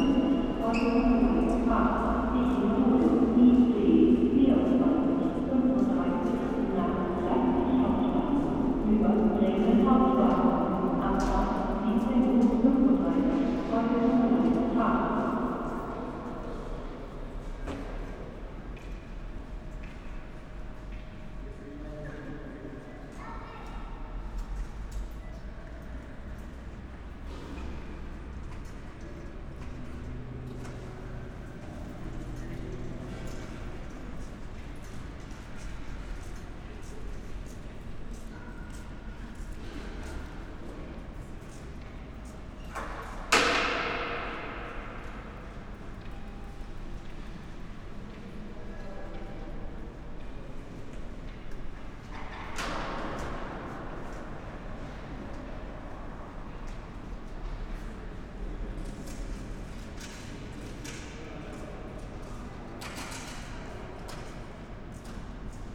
Oldenburg Hbf - hall ambience
Oldenburg Hauptbahnhof, main station ambience, rush hour in corona/ covid-19 times
(Sony PCM D50, Primo EM172)